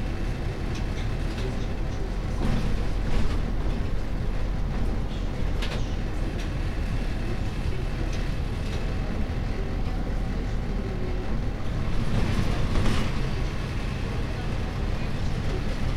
l'viv, trolleybus ride - line 10 from sykhiv to the university (part I)